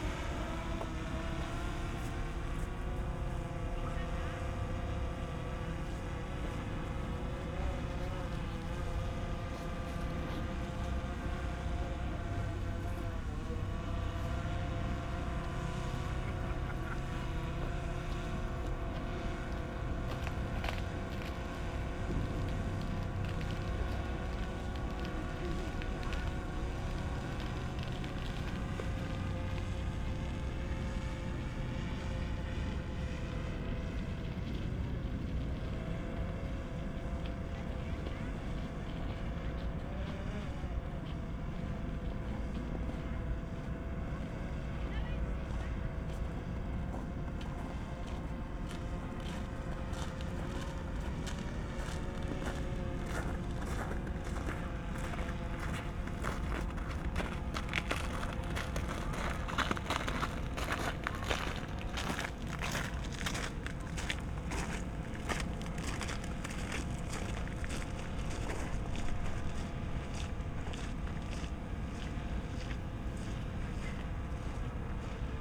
Eichepark, Marzahn, Berlin, Deutschland - park ambience, kids playing, a drone flying around
Eichepark, near river Wuhle, Sunday afternoon in winter, kids playing at the Wuhletalwächter monument, suddenly a drone appears above me, pedestrians passing-by.
(SD702, AT BP4025)